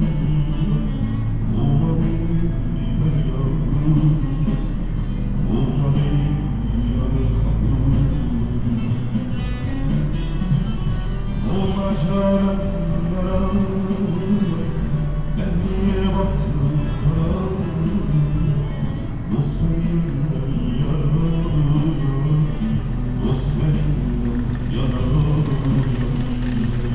Melkwegstraat, Binckhorst, Den Haag - Music
A car repair shop with open doors. And traffic passing by.
Recorded using a Senheiser ME66, Edirol R-44 and Rycote suspension & windshield kit.